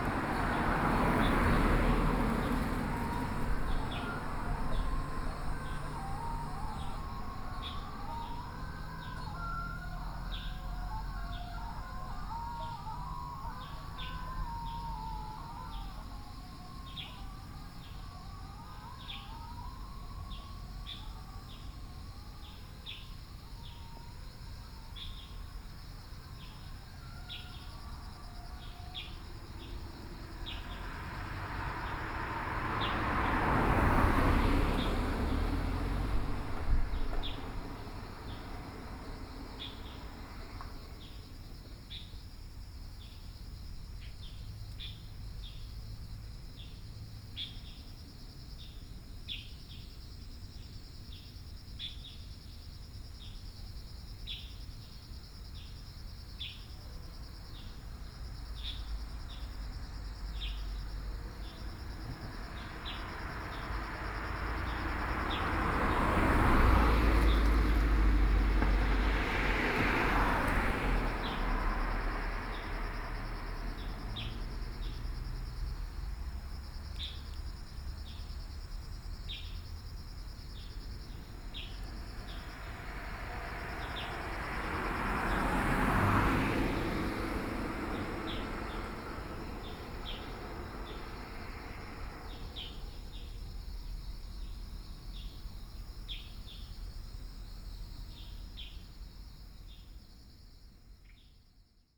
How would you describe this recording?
Birds singing, Traffic Sound, Funeral, At the roadside, Sony PCM D50+ Soundman OKM II